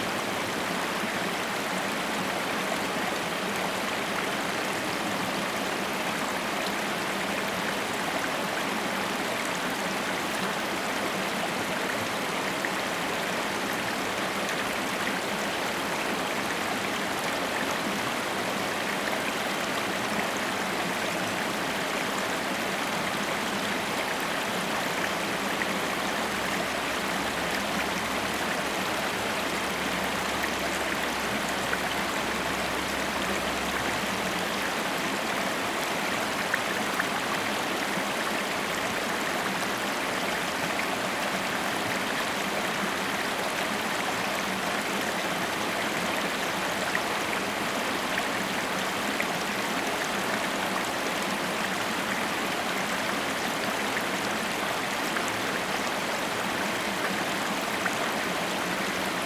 Simpson Lake Spillway, Valley Park, Missouri, USA - Simpson Lake Spillway
Simpson Lake Spillway. Recording of Simpson Lake Spillway